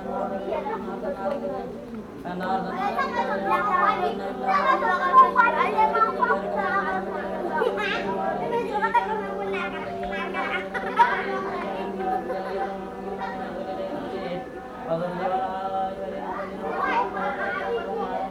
Unnamed Road, Markala, Mali - Turbo Quran 3
Turbo Qur'an 3 All together now...
Ségou, Mali, 13 January 1996